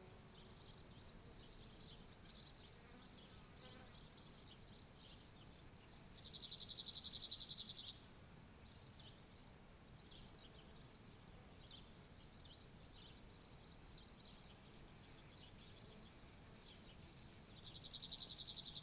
Mesa Vouni, Andros, Greece - Messa Vouni hillside
Just above the mountain village of Messa Vouni in the hot and still midday sun.